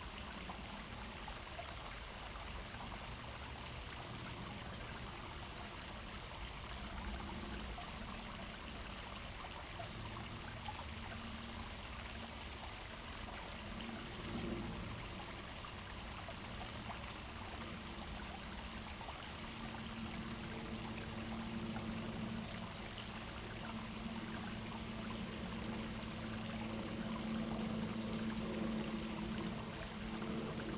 Owl Creek, Queeny Park, Town and Country, Missouri, USA - Owl Creek Crossing
Recording off trail crossing Owl Creek in Queeny Park
Missouri, United States, 16 August 2022, ~19:00